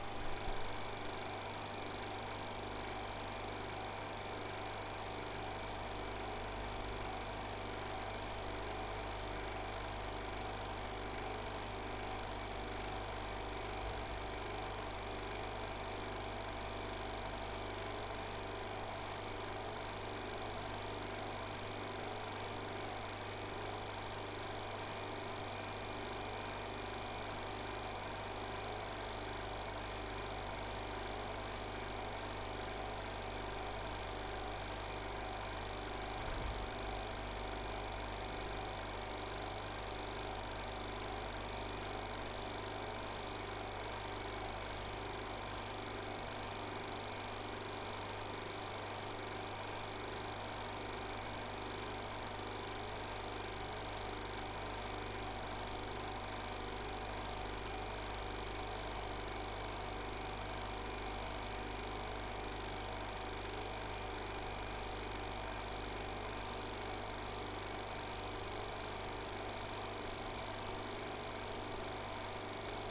Calle Sor Juana Inés de la Cruz, Madrid, España - Vacuum pump
It was recorded at the Institute of Materials, associated to the CSIC. We can hear the noise that the vacuum pump machine from one the laboratories makes while it’s working. It has a tube connected to the pump that absorbs the air from the place where it’s directed. Its use is common in most of the laboratories.
Recorded with a Zoom H4n.